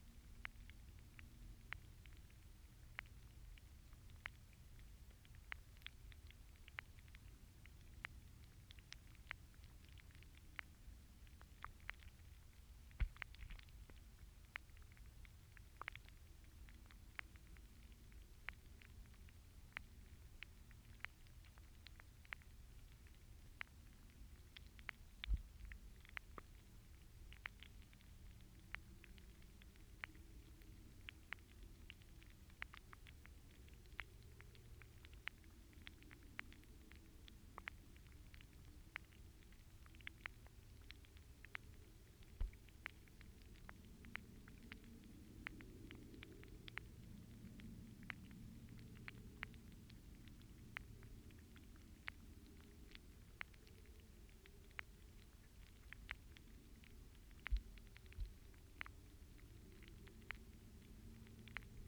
Llanchidian Salt Marsh
Hydrophone recording of a single stem plant growing in the water.